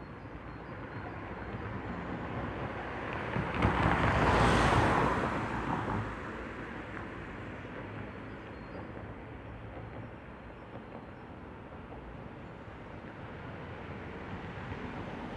Burgstraße, Giebichenstein, Halle (Saale), Deutschland - Burgstraße, Halle (Saale) - cars passing on a cracked road
Burgstraße, Halle (Saale) - cars passing on a cracked road. [I used the Hi-MD-recorder Sony MZ-NH900 with external microphone Beyerdynamic MCE 82]
Halle, Germany, March 2012